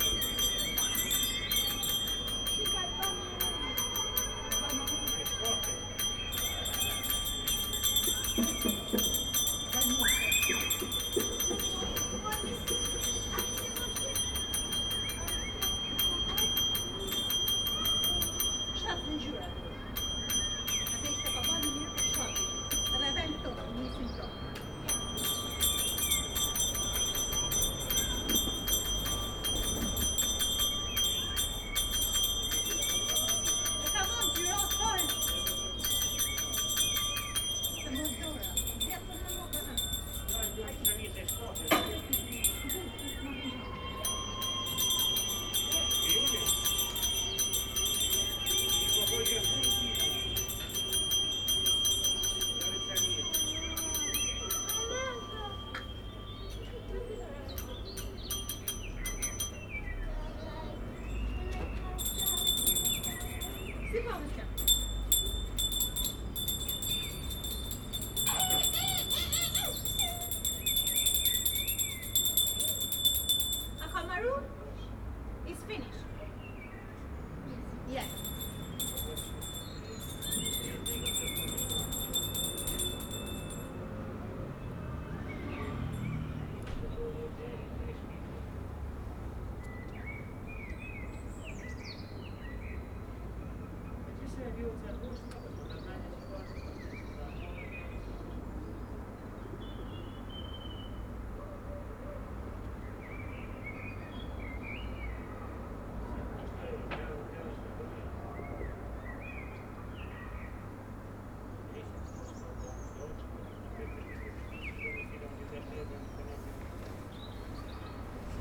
Mapesbury Rd, London, UK - Clapping for NHS
recording clapping for NHS from my window